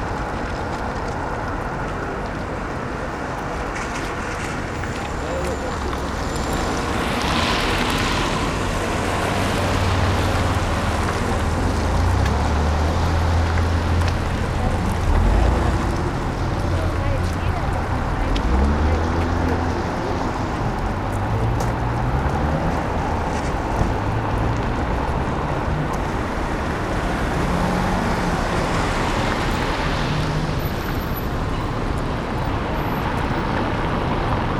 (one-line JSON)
{"title": "Berlin: Vermessungspunkt Maybachufer / Bürknerstraße - Klangvermessung Kreuzkölln ::: 28.01.2013 ::: 16:57", "date": "2013-01-28 16:57:00", "latitude": "52.49", "longitude": "13.43", "altitude": "39", "timezone": "Europe/Berlin"}